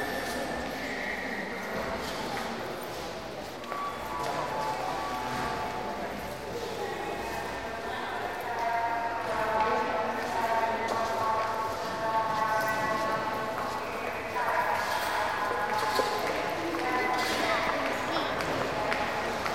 budapest, Nyugati pályaudvar, west station
station atmo with announcements and train noises
international city scapes and social ambiences
Magyarország, European Union